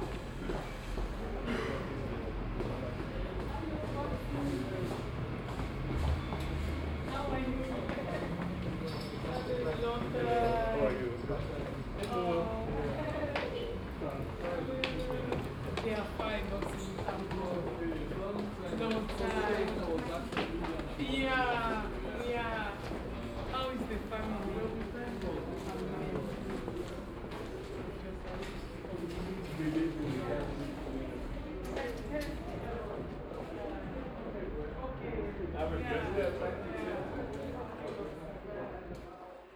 Borbeck - Mitte, Essen, Deutschland - essen, borbeck, station
Am Bahnhof Essen Borbeck. Der Klang von Schritten auf der Treppe zum Bahnsteig. Ein Zug kommt an - ein Mädchen singt, Stimmen und Schritte.
At the station Essen Borbeck. The sound of steps at the stairways to the platform. A train arrives - a girlcomes singing, steps and voices.
Projekt - Stadtklang//: Hörorte - topographic field recordings and social ambiences